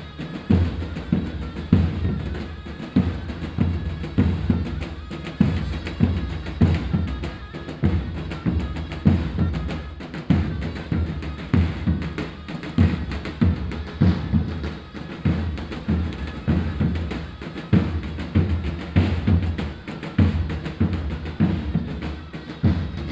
cologne, alteburger wall, kurdische hochzeit
kurdische hochzeitsfeier mittags auf der strasse, trommler und schalmaispieler
soundmap nrw: social ambiences/ listen to the people - in & outdoor nearfield recordings